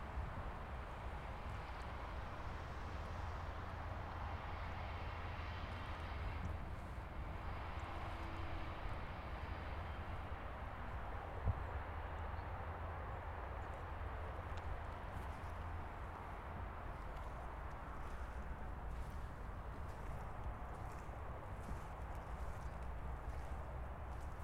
Petroleumhavenweg, Amsterdam, Nederland - Wasted Sound Petroleumhaven
With the wasted sound project, I am looking for sounds that are unheard of or considered as noise.
Noord-Holland, Nederland, 6 November 2019, 14:12